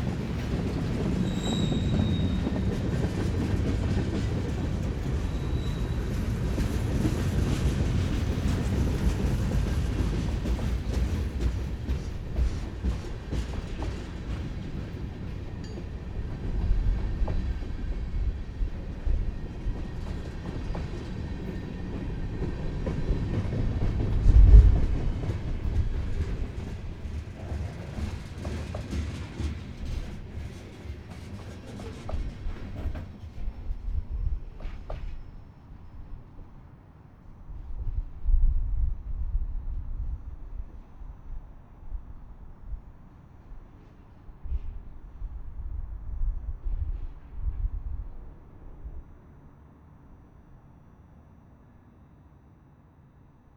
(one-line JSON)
{"title": "S Alabama St, Indianapolis, IN, USA - Eastbound CSX Freight Train in downtown Indianapolis", "date": "2019-04-22 21:29:00", "description": "A CXS Freight Train Eastbound out of downtown Indianapolis. No horn because that section of track going through downtown is elevated. The trains have to slow to a crawl coming through downtown. Record on April 22, 2019 at 9:29 pm. Recorded with Sony ICD-SX712 using the recorder’s onboard mics.", "latitude": "39.76", "longitude": "-86.15", "altitude": "221", "timezone": "America/Indiana/Indianapolis"}